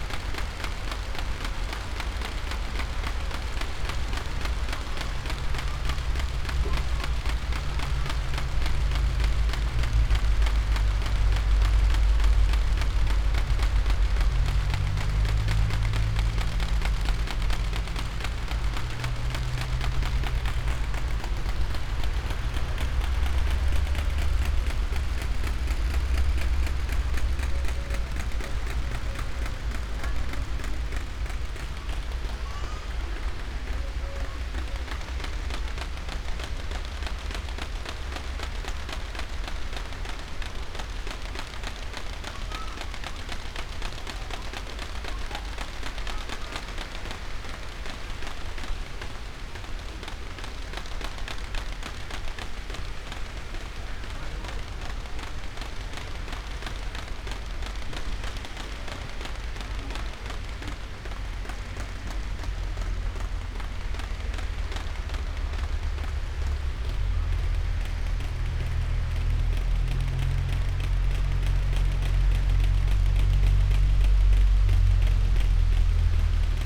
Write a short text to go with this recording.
wind in plastic windmill, tree crowns, camping ambience